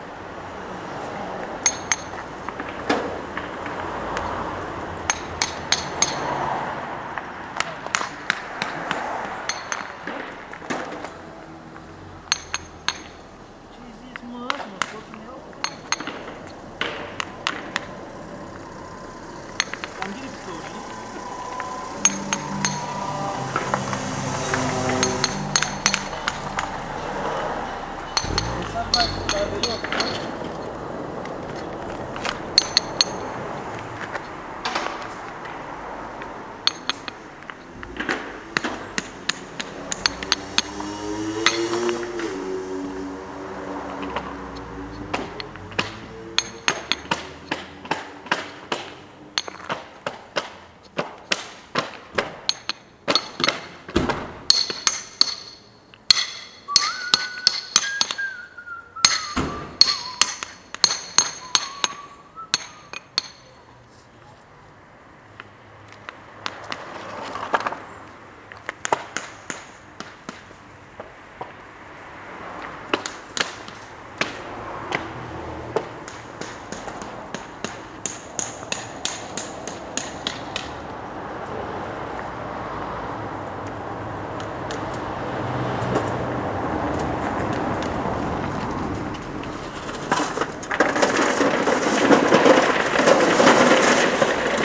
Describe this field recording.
Street pavement downtown in Pavia are made of river pebbles. Three workers here are building the pavement: one selects the best rounded stones fron a pile, puts them on a barrow and unloads on the ground, the other two gently dab the pebbles on the soil with small hammers and level them to the ground. The gentle sound created by this rhyhtmic work gives an idea of the patience required